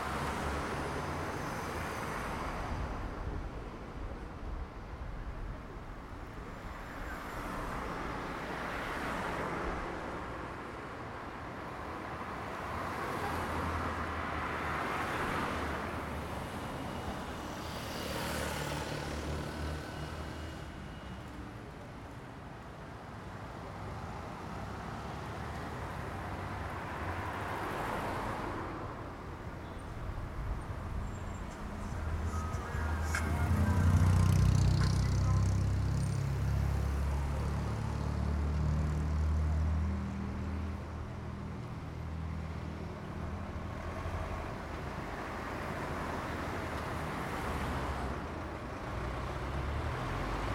Av. Antônio Afonso de Lima - Vila Lima I, Arujá - SP, 07432-575, Brasil - avenida em Aruja
captação estéreo com microfones internos
- Vila Lima I, Arujá - SP, Brazil, 24 April 2019